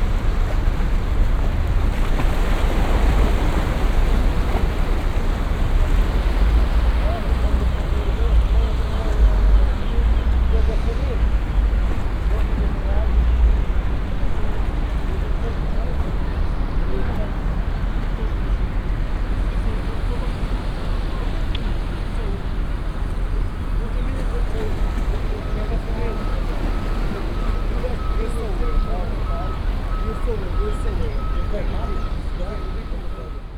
Funchal, Marina - morning seagulls
fair-sized flock of seagulls occupying the roof of the building across the harbor. waves splashing on big chunks of concrete. three guys finishing their Friday party, coming to sit at the end of the pier, drinking beer and talking vigorously.